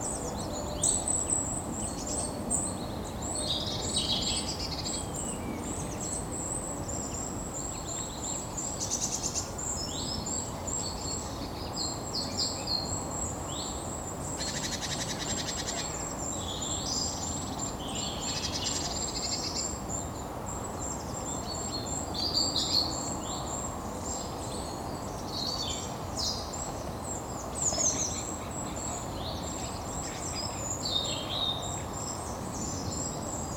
Maintenon, France - Great tits
Great tits and blue tits singing early in the morning, in a quiet village.